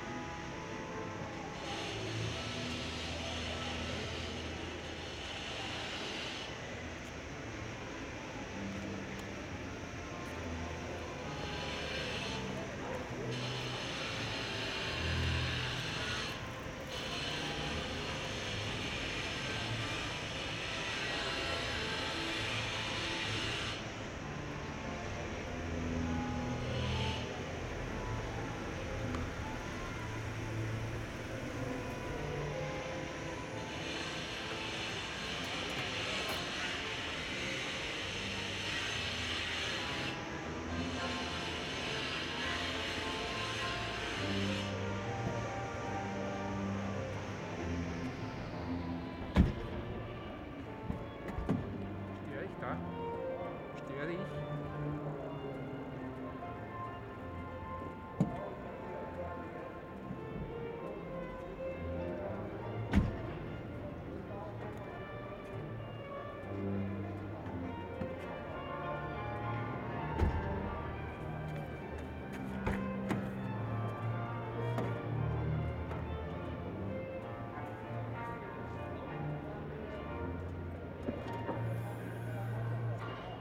Strassenmusik aus einer Seitengasse, orchestriert von einem Handwerker. Gegen Ende fragt mich ein Autofahrer, der mehrmals beim aus, oder ausladen die Autotür krachen läst, ob er meine Tonaufnahme störe. (Bin nicht mehr sicher ob der Aufnahmeort korrekt ist.)
Salzburg, Austria, 17 April 2007